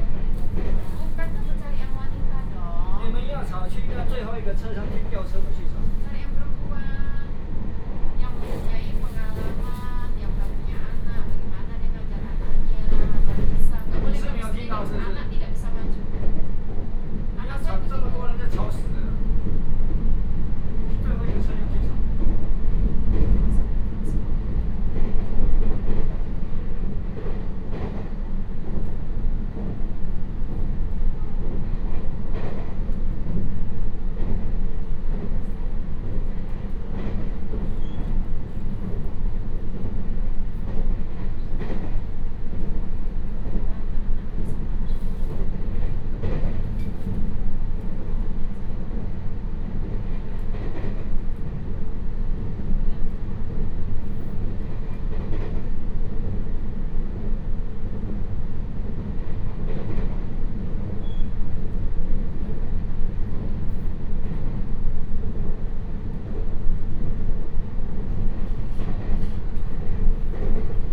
Yangmei, Taoyuan County - An uncomfortable process
In the compartment, An uncomfortable process, It is very regrettable, Dialogue in the compartment